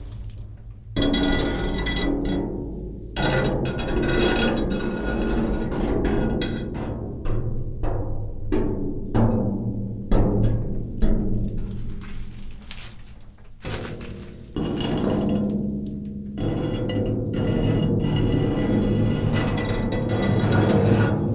quarry, metal shed, Marušići, Croatia - void voices - stony chambers of exploitation - metal shed
dry leaves, with sharp flat carved stone in hand, touching the walls and objects inside - metal thing and plastic chair ...
22 July 2015, ~19:00